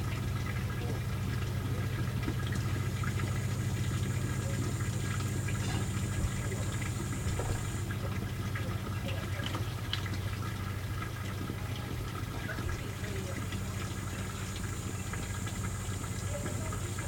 Viraksaare, Estonia - evening sounds around summerhouses
birds, voices, door slams, tin roof snaps, bushcrickets
Järva County, Estonia, 10 July 2010, 21:19